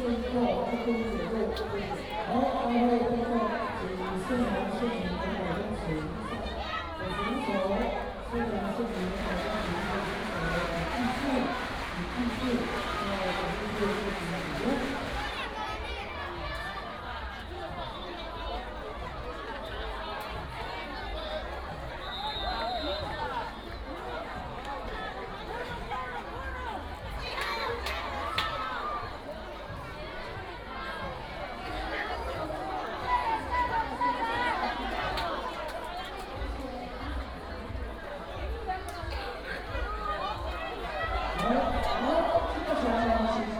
金峰鄉介達國小, Taitung County - Cheer cheers
School and community residents sports competition, Cheer cheers
Taitung County, Jinfeng Township, 東64鄉道, 4 April 2018